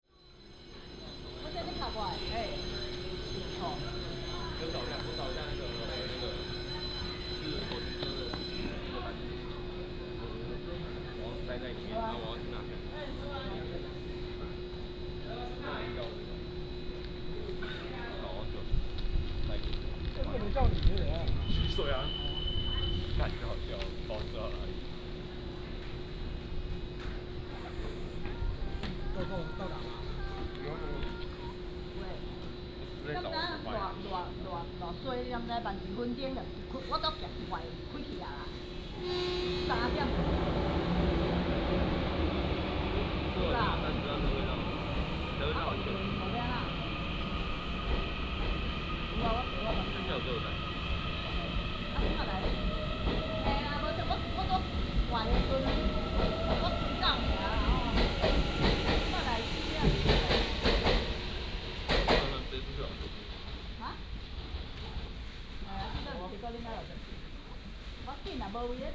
Xinying Station, 台南市新營區 - At the station platform
At the station platform, Station broadcasting, The train leaves the station
31 January 2017, 15:58